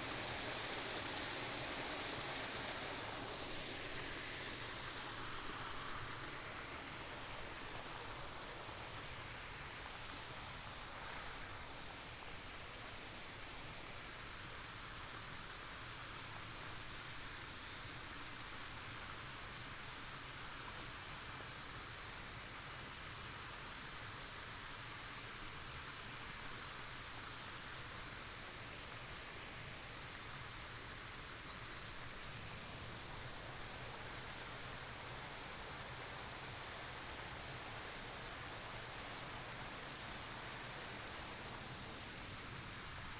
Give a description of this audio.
stream, Cicada, traffic sound, birds sound, The plane flew through